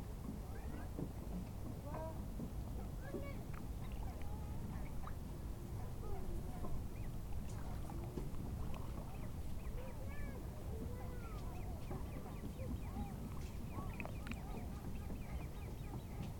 Chemin au fil de l'eau, Brison-Saint-Innocent, France - Rivage
Le soleil se couche derrière la montagne de la Charvaz, au bord du lac du Bourget quelques promeneurs empruntent le chemin au fil e l'eau les pas font crisser les graviers, quelques jeunes grèbes piaillent sur le lac.